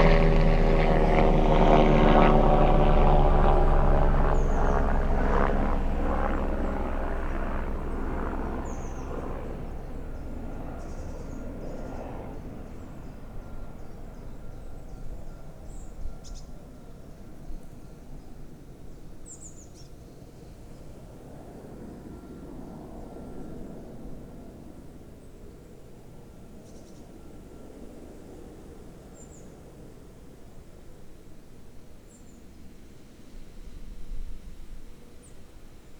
Gaer Fawr Woods, Guilsfield, Helicopter over Woods
World Listening Day - Helicopter flies over woodland
Welshpool, Powys, UK